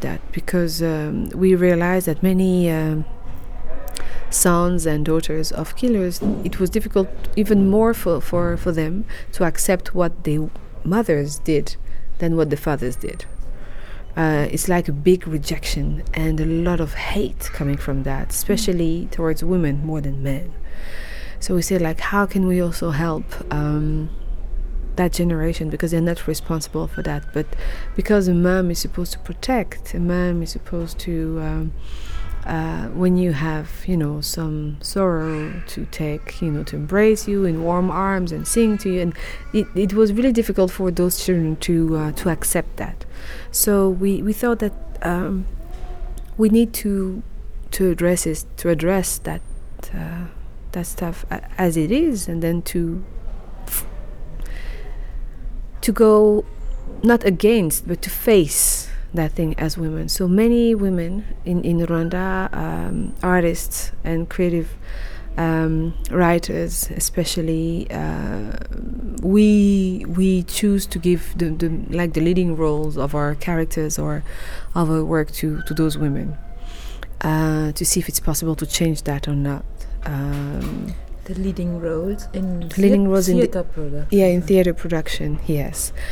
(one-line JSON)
{"title": "City Library, Hamm, Germany - Rwanda is a women’s country …", "date": "2014-06-16 15:35:00", "description": "The noise of the bus terminal outside the library got a bit overwhelming… so we pulled to chairs in a far away corner of the library and continued with our conversation. Here, Carole tells us about the 1994 Genocide in Rwanda from a woman's perspective…\nWe are with the actor Carole Karemera from Kigali, Rwanda was recorded in Germany, in the city library of Hamm, the Heinrich-von-Kleist-Forum. Carole and her team of actors from the Ishyo Art Centre had come to town for a week as guests of the Helios Children Theatre and the “hellwach” (bright-awake) 6th International Theatre Festival for young audiences.\nCarole’s entire footage interview is archived here:", "latitude": "51.68", "longitude": "7.81", "altitude": "66", "timezone": "Europe/Berlin"}